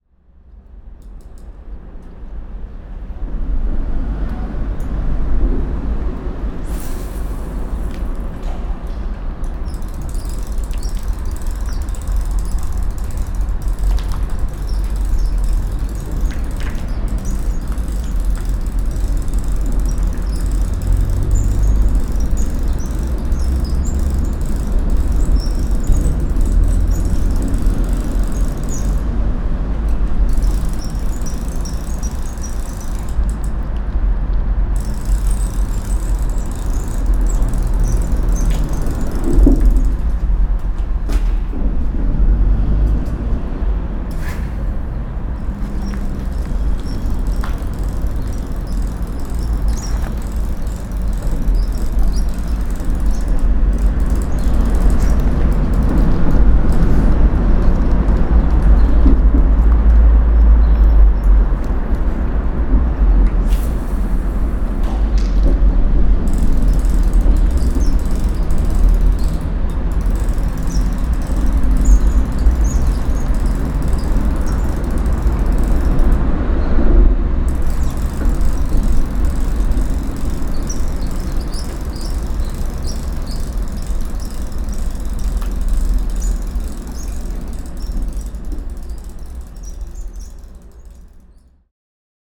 Givet, Pont de Meuse, a young fisherman under the bridge - un jeune pêcheur sous le pont